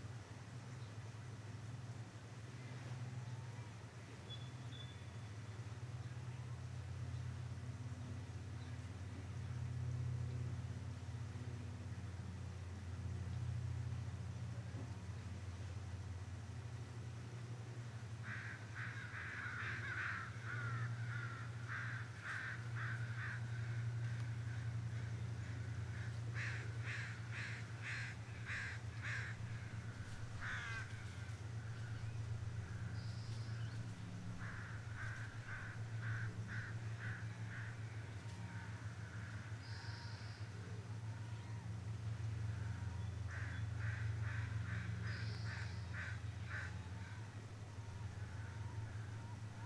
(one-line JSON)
{"title": "Haines Wharf Park, Edmonds, WA - Train #4: Haines Wharf", "date": "2019-07-23 08:23:00", "description": "Halfway between the Edmonds train depot and Picnic Point, we stop at tiny Haines Wharf Park, which is the only public access to the railroad tracks in the high-priced real estate along the waterfront -- albeit fenced-off, long-abandoned, and posted \"No Trespassing.\" We wait until a northbound freight rumbles past with nary a wave. The whole time I was waiting an unmarked security guard waited in his idling car behind me.", "latitude": "47.85", "longitude": "-122.34", "altitude": "9", "timezone": "America/Los_Angeles"}